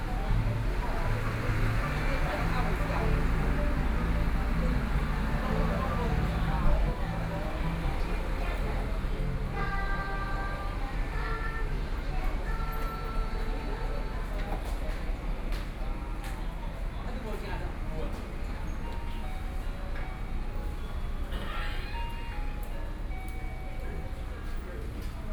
Su'ao Township, Yilan County - Town
Rainy Day, Sitting in front of a convenience store, Out of people in the temple and from, Sound convenience store advertising content, Binaural recordings, Zoom H4n+ Soundman OKM II
Suao Township, Yilan County, Taiwan